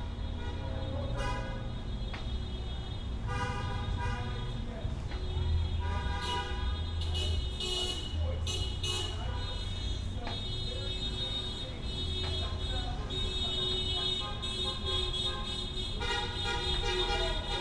W Willie Nelson Blvd, Austin, TX, USA - Rent Strike Protest
Recorded w/ Sound Devices 633 and LOM Stereo USIs
Texas, United States of America